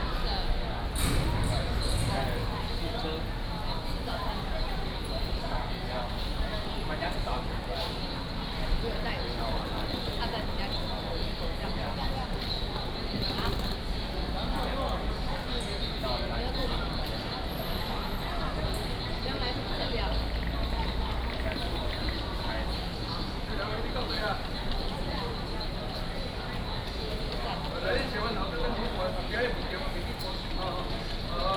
Taitung Station, Taiwan - In the station lobby
In the station lobby
Taitung City, Taitung County, Taiwan, 6 October 2014